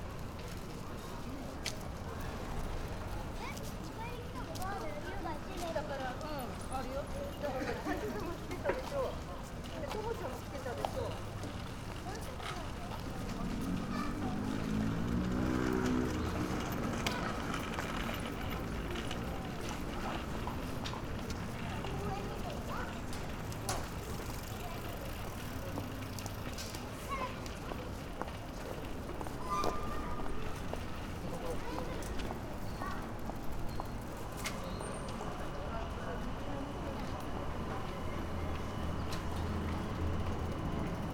Tokio, Arakawa, Higashinippori district, near Olympic - bikes crossing streets near convenient store
a living neighborhood of tokyo, many people moving around riding bikes, beautiful ticking all over the place.
北葛飾郡, 日本, 28 March 2013, ~19:00